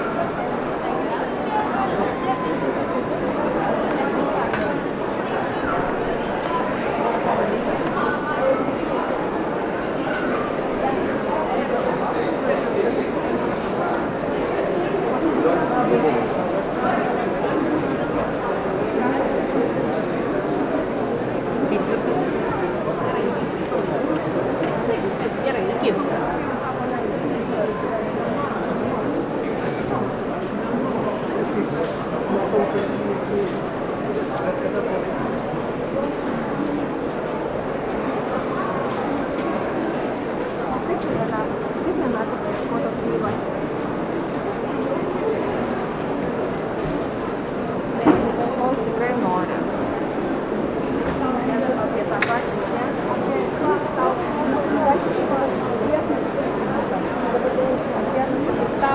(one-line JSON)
{"title": "Lithuania, Vilnius, at the Book Fair, 2011", "date": "2011-02-19 19:15:00", "description": "inside the main hall of Vilnius Book Fair, 2011.", "latitude": "54.68", "longitude": "25.23", "altitude": "92", "timezone": "Europe/Vilnius"}